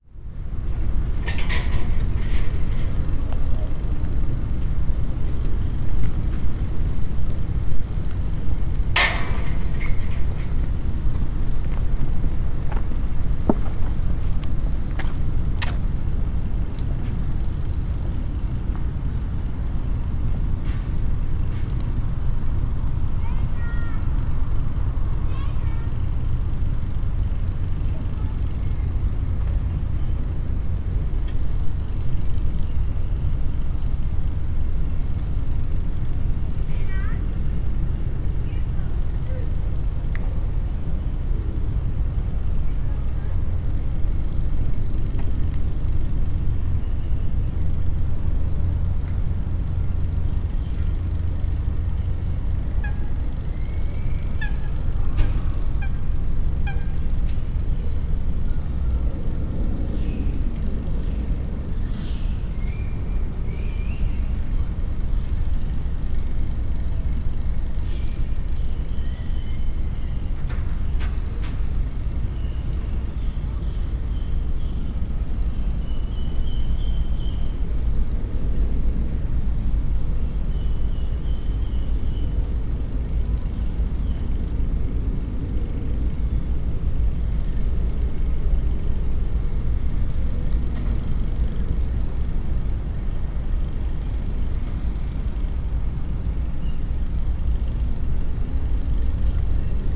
Centraal Station, Amsterdam, Netherlands - (300 BI) Marine atmosphere
Binaural recording of a marine / industrial atmosphere.
Recorded with Soundman OKM on Sony PCM D100